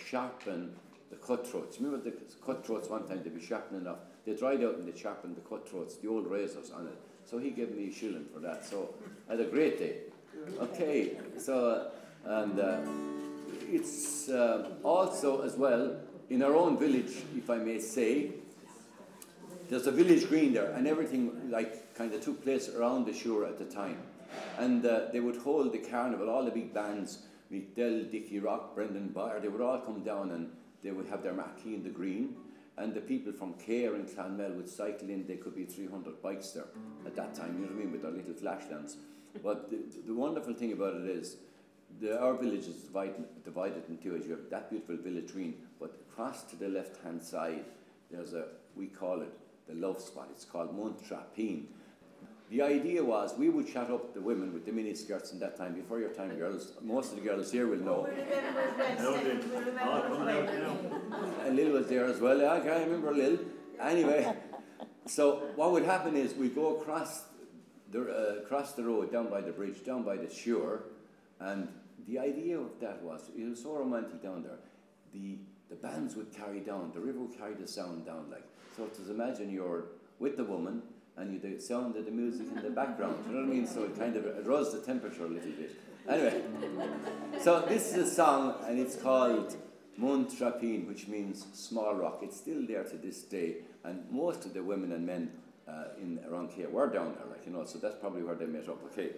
Stefan recalls his memories of the River Suir at Ardfinnan. Recorded as part of the Sounding Lines visual art project by Claire Halpin and Maree Hensey which intends to isolate and record unusual and everyday sounds of the River Suir in a visual way. Communities will experience a heightened awareness and reverence for the river as a unique historical, cultural and ecological natural resource. The artists will develop an interactive sound map of the River which will become a living document, bringing the visitor to unexpected yet familiar places.
Co. Tipperary, Ireland, 21 March 2014, 12:00